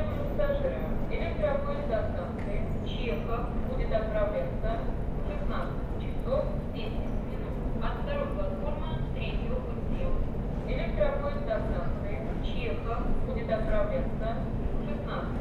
{"title": "Басманный р-н, Москва, Россия - Kursk Station", "date": "2016-06-14 16:25:00", "description": "Before the departure of the train", "latitude": "55.76", "longitude": "37.66", "altitude": "141", "timezone": "Europe/Moscow"}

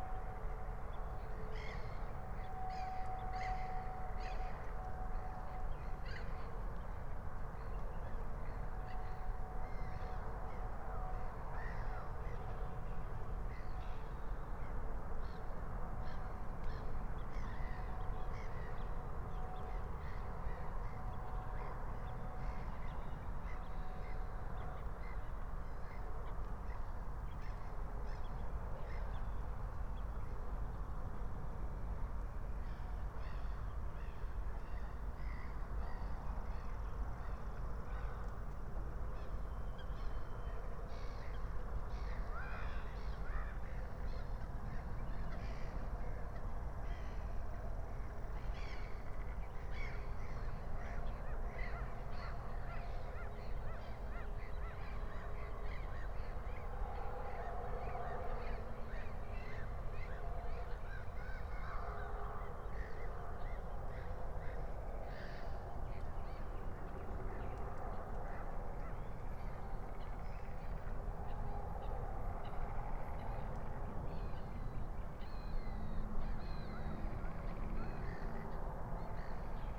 {"date": "2022-05-29 22:50:00", "description": "22:50 Berlin, Buch, Moorlinse - pond, wetland ambience", "latitude": "52.63", "longitude": "13.49", "altitude": "51", "timezone": "Europe/Berlin"}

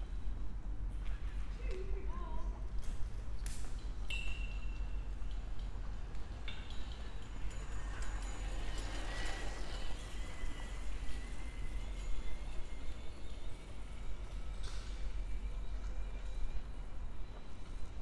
{"title": "Richartzstraße, Köln - midnight walk, ambience /w church bells", "date": "2020-09-30", "description": "Köln, Cologne, walking from the Dom cathedral to Breite Str. around midnight, church bells, cars, cyclists, homeless people, pedestrians, various sounds from ventilations, billboards etc.\n(Sony PCM D50, Primo EM172)", "latitude": "50.94", "longitude": "6.96", "altitude": "63", "timezone": "Europe/Berlin"}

